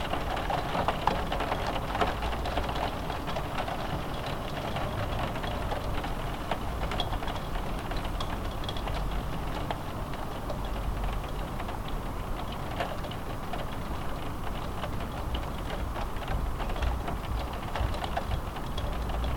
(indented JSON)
{
  "title": "Dekerta, Kraków, Poland - (739 UNI) Rain drops on a roof window",
  "date": "2021-04-03 12:25:00",
  "description": "Rain drops on a glass roof window.\nrecorded with UNI mics of a Tascam DR100 mk3\nsound posted by Katarzyna Trzeciak",
  "latitude": "50.05",
  "longitude": "19.96",
  "altitude": "202",
  "timezone": "Europe/Warsaw"
}